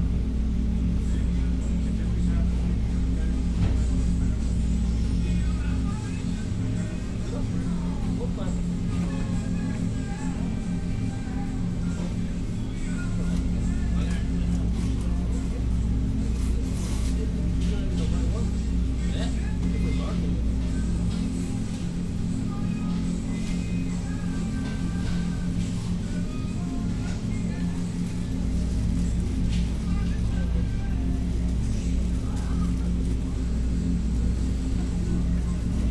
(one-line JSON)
{"title": "North Lamar, Austin, TX, USA - HEB Bardo 1", "date": "2015-08-27 08:40:00", "description": "Recorded with two DPA4060s in my hands and a Marantz PMD661", "latitude": "30.36", "longitude": "-97.70", "altitude": "213", "timezone": "America/Chicago"}